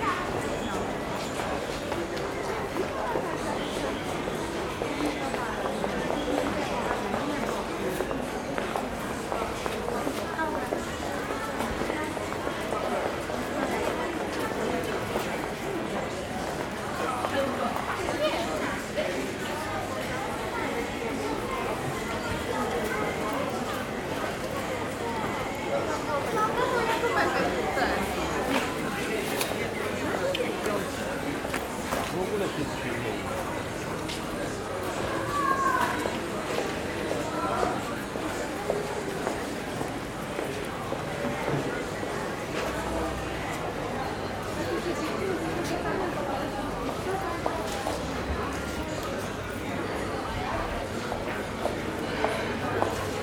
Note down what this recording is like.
binaural walk-through in the covered market of Baluty. Made during a sound workshop organized by the Museum Sztuki of Poland